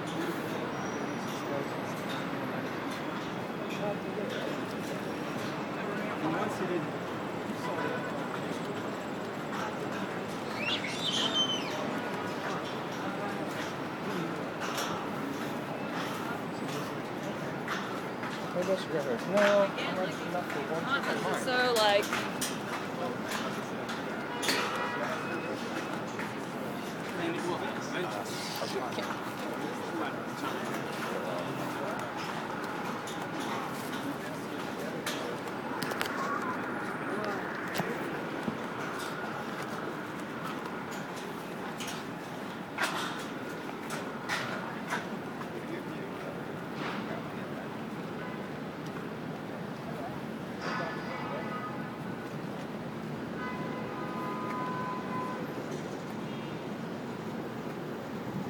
The High Line is a 1-mile (1.6 km) New York City linear park built on a 1.45-mile (2.33 km)section of the former elevated New York Central Railroad spur called the West Side Line, which runs along the lower west side of Manhattan; it has been redesigned and planted as an aerial greenway. The High Line Park currently runs from Gansevoort Street, three blocks below West 14th Street, in the Meatpacking District, up to 30th Street, through the neighborhood of Chelsea to the West Side Yard, near the Javits Convention Center.
I walked the extent of the Highline at different times of day, from South to North, recording the natural, human, and mechanical sounds that characterize this unique place.
NY, USA, 7 September